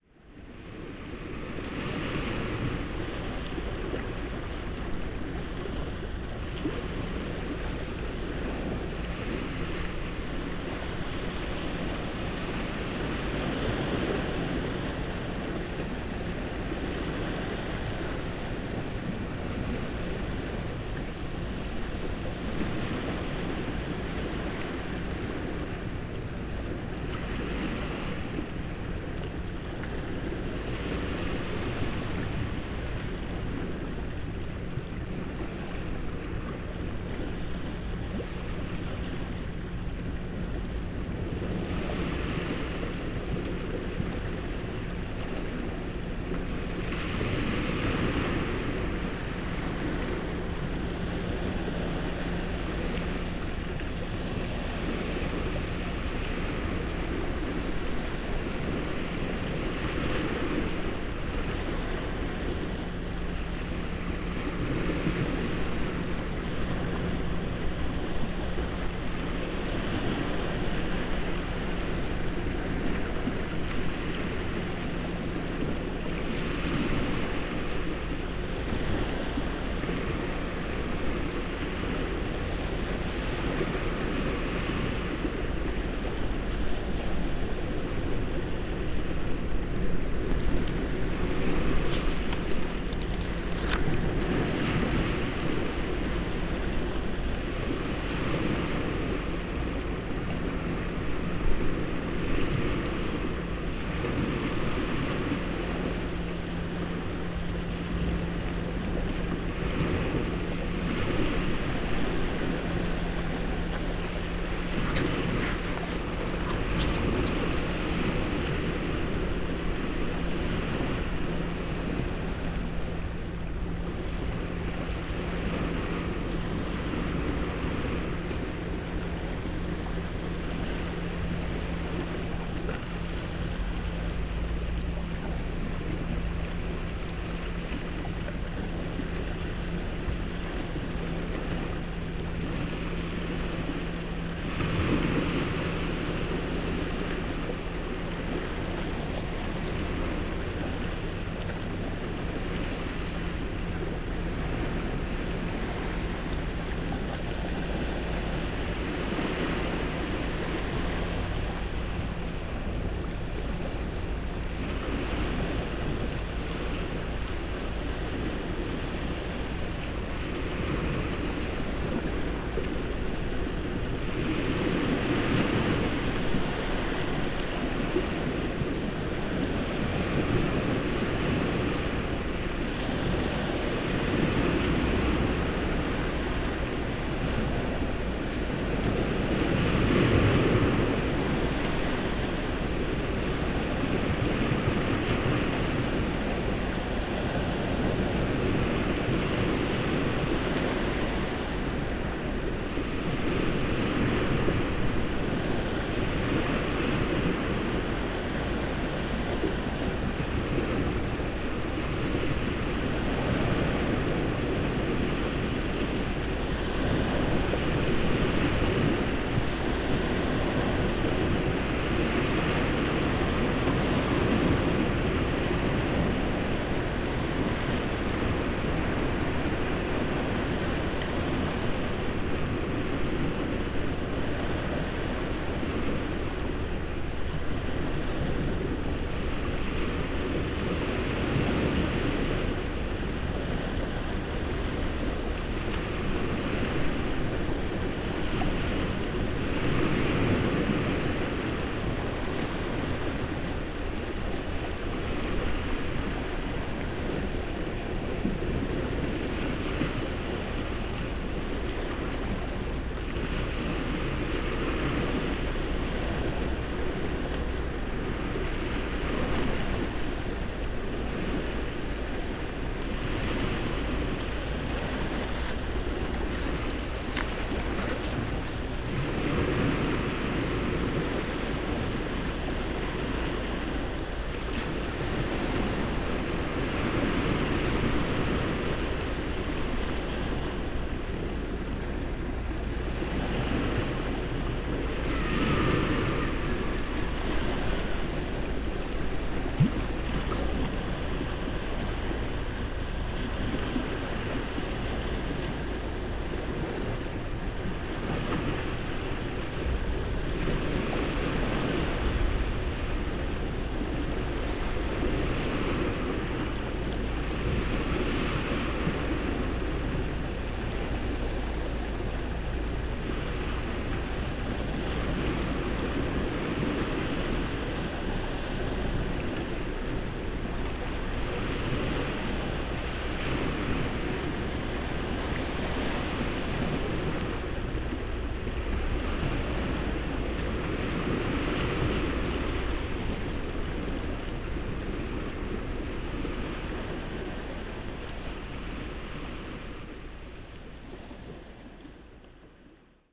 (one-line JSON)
{"title": "late aftrenoon sea, Novigrad - while reading, silently", "date": "2014-07-14 17:08:00", "description": "storm approaching, sea waves, crustaceans, book leaves", "latitude": "45.32", "longitude": "13.55", "timezone": "Europe/Zagreb"}